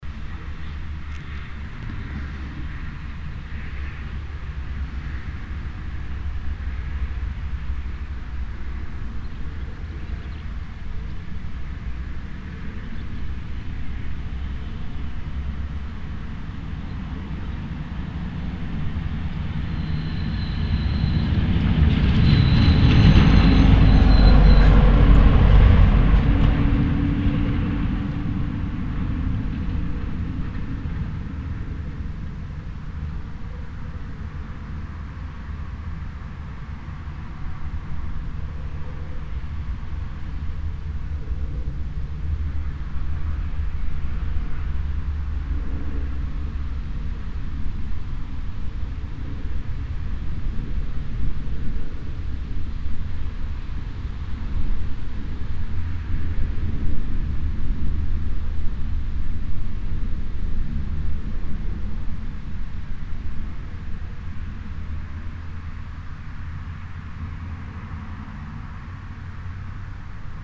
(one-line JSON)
{"title": "hoscheid, sound sculpture, lauschinsel", "date": "2011-06-02 15:34:00", "description": "A Part of the Hoscheid Klangwanderweg - sentier sonore is a sound sculpture entitled Lauschinsel. Here the listener can lay down on his back, place his head in between two wooden tubes and listen to the local ambience - here recorded without the new headphone application.\nmore informations about the Hoscheid Klangwanderweg can be found here:\nProjekt - Klangraum Our - topographic field recordings, sound sculptures and social ambiences", "latitude": "49.95", "longitude": "6.08", "altitude": "493", "timezone": "Europe/Luxembourg"}